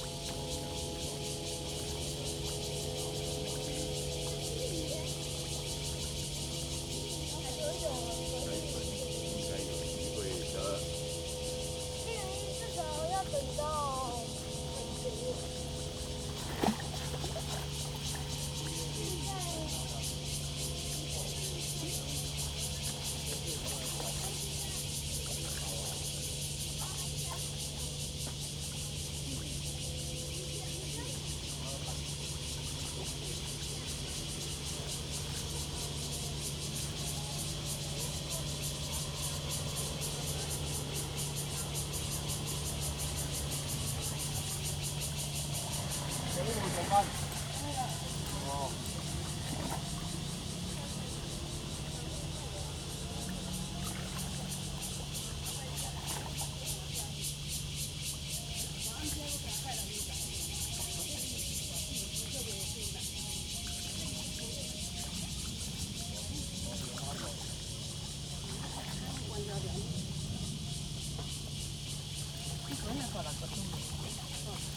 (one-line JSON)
{"title": "Tamsui River, Tamsui Dist., New Taipei City - Sitting in the river", "date": "2015-07-18 06:56:00", "description": "On the river bank, Acoustic wave water, Cicadas cry\nZoom H2n MS+XY", "latitude": "25.17", "longitude": "121.44", "altitude": "10", "timezone": "Asia/Taipei"}